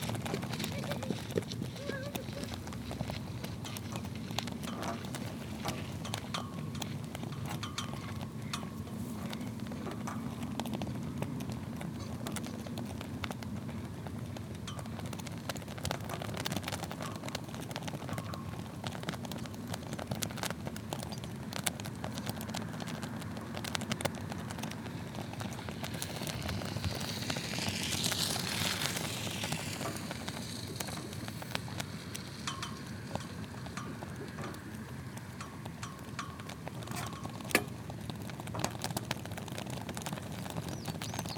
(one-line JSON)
{"title": "Nieuwvliet, Nederlands - Flags in the wind", "date": "2019-02-17 12:00:00", "description": "On the massive embankment protecting the polder, sound of two flags swaying into the wind.", "latitude": "51.39", "longitude": "3.45", "altitude": "8", "timezone": "Europe/Amsterdam"}